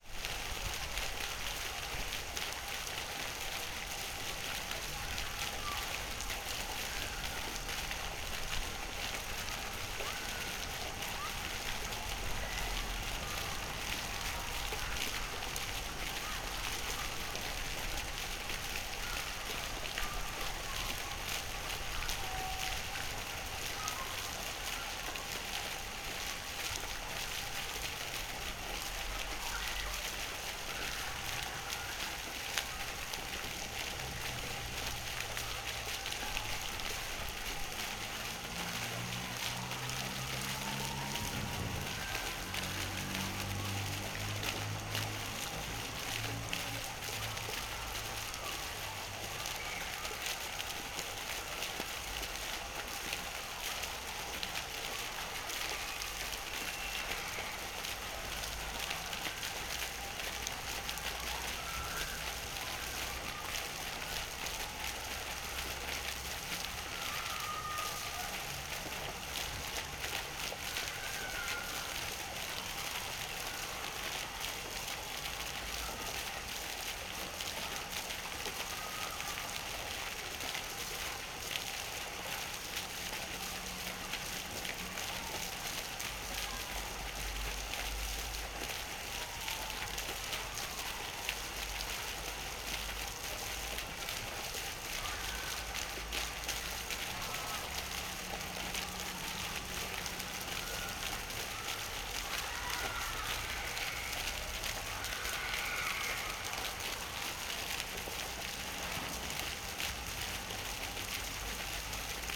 Sq. Emile Mayrisch, Esch-sur-Alzette, Luxemburg - fountain
fountain at Sq. Emile Mayrisch, Esch-sur-Alzette, schoolkids in the background
10 May 2022, 10:55